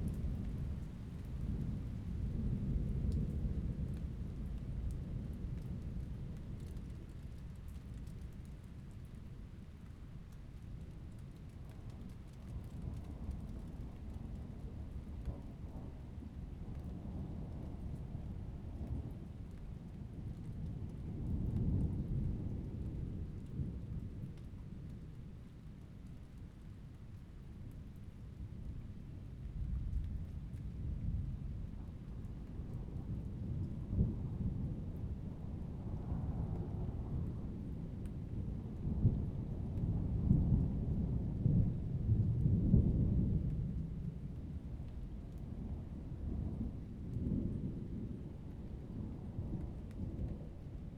Poznan, balcony - continuous thunder
a continues artillery of thunderstorms, rolling ceaselessly for an entire evening, fading in and out, triggering car alarms
Poznan, Poland, 12 July 2012